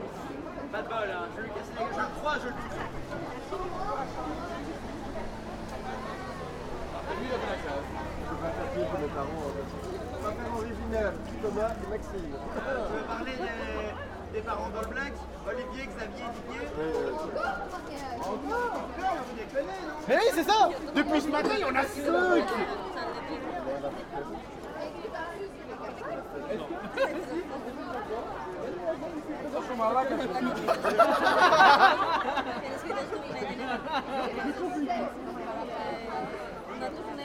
Brussels, Manneken Pis - drukte op straat @ Manneke Pis